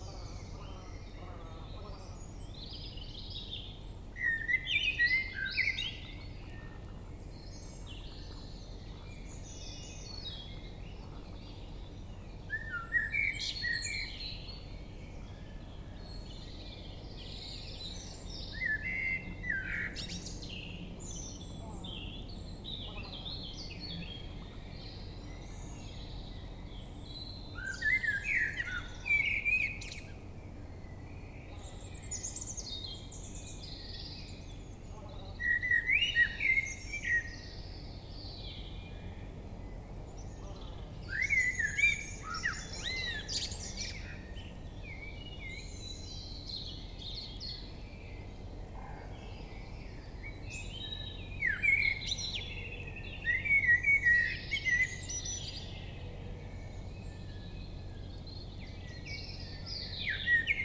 Atlantic Pond, Ballintemple, Cork, Ireland - Dawn Chorus w/ Prominent Blackbird
Recorder placed on a tiny tripod in the grass facing east. Cloudy with very little wind, before dawn. I attempted to catch the reverb from the clearing in the trees. I like how prominent the blackbird ended up to the right of the stereo.